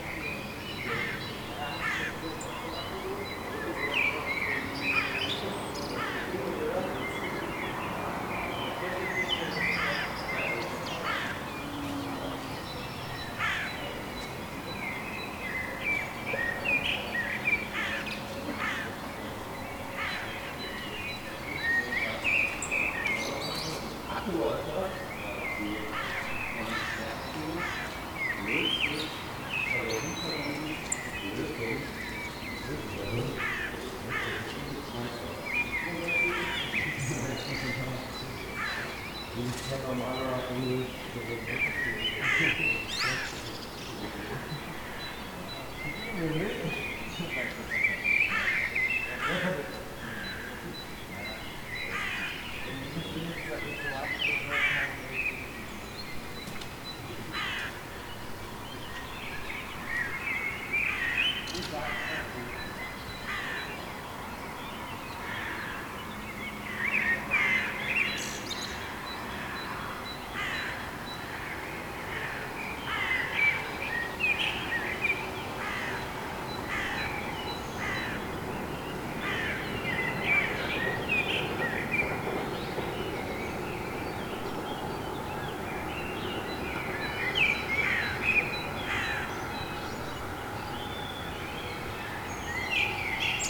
August-Bebel-Straße 12, 06108 Halle (Saale), Deutschland - Saturday early Morning, birds awake, city waking up
A long early morning in the city of Halle, the recording starting at 4:29 and lasting for about 40 minutes. There is the general city hum with a Blackbird dominating over other birds, occasional cars, few people and an airplane.
4 June, 04:29